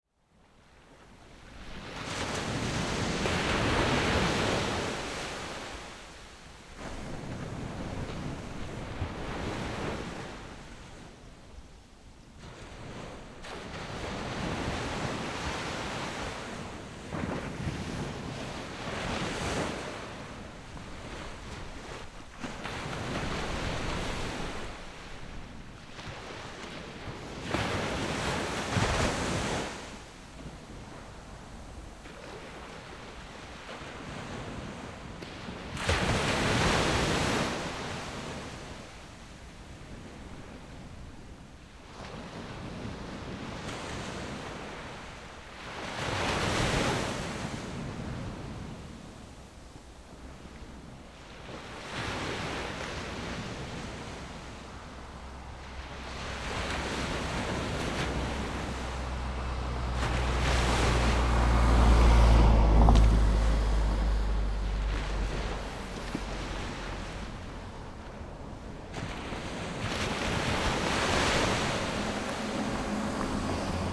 塘後沙灘, Beigan Township - Sound of the waves
Sound wave, In the beach
Zoom H6 +Rode NT4
馬祖列島 (Lienchiang), 福建省, Mainland - Taiwan Border, 15 October